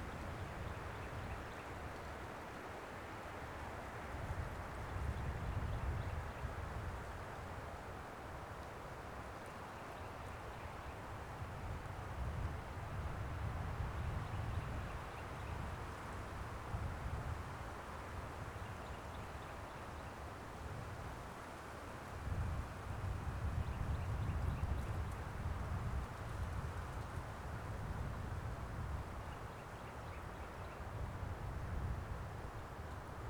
Ozone National Forest - Ozone Recreation Area & Campground
Sounds of the Ozone Recreation Area & Campground inside the Ozone National Forest. The wind started to pickup so the sound of the wind in the trees can be heard.